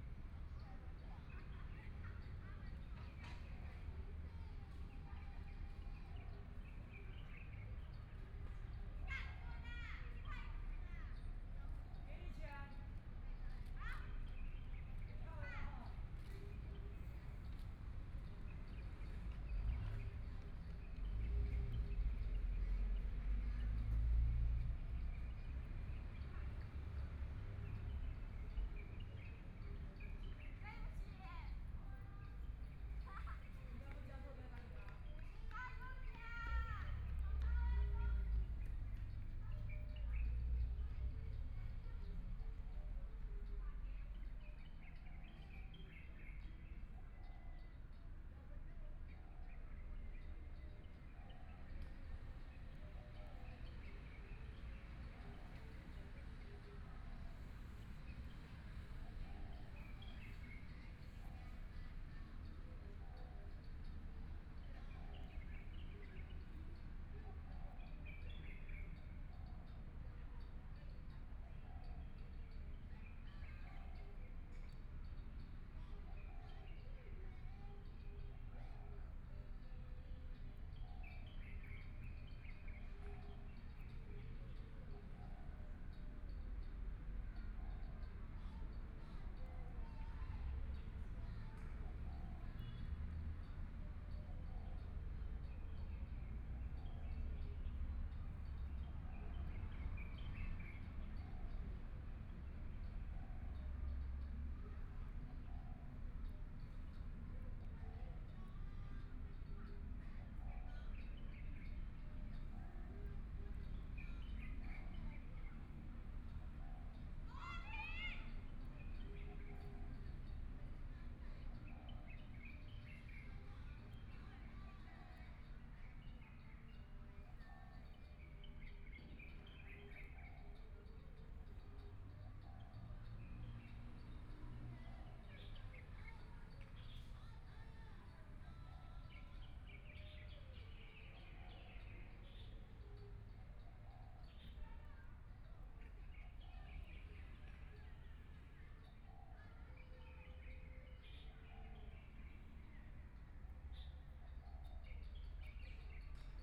中琉紀念公園, Hualien City - Sitting in the park
Traffic Sound, Birdsong, Elderly people are listening to the sound of radio programs, Junior High School Students
Please turn up the volume
Binaural recordings, Zoom H4n+ Soundman OKM II
Hualien County, Taiwan, February 2014